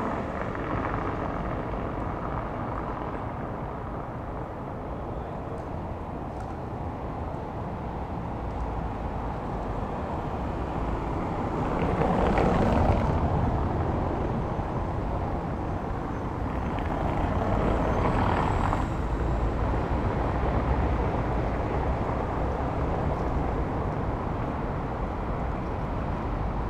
Berlin: Vermessungspunkt Friedel- / Pflügerstraße - Klangvermessung Kreuzkölln ::: 27.10.2010 ::: 14:51
Berlin, Germany, October 2010